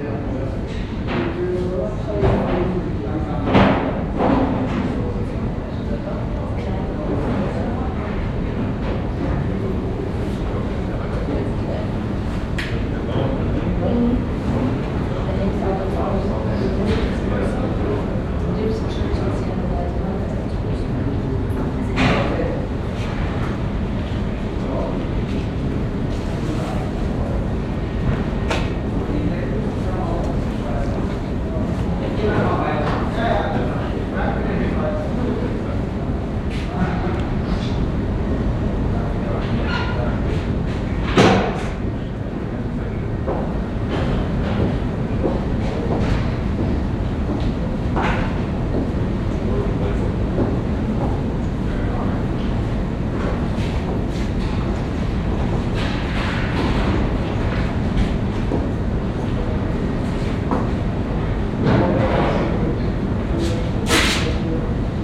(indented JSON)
{
  "title": "Rheinpark Bilk, Düsseldorf, Deutschland - Düsseldorf, Rheinturm, visitor platform",
  "date": "2012-11-22 14:40:00",
  "description": "At the indoor visitor platform. The sounds of visitors steps walking and talking by the glass window view and taking photographs and the sounds of workers who prepare the technique for an evening party event plus the permanent sound of a ventilation.\nsoundmap nrw - social ambiences, sonic states and topographic field recordings",
  "latitude": "51.22",
  "longitude": "6.76",
  "altitude": "41",
  "timezone": "Europe/Berlin"
}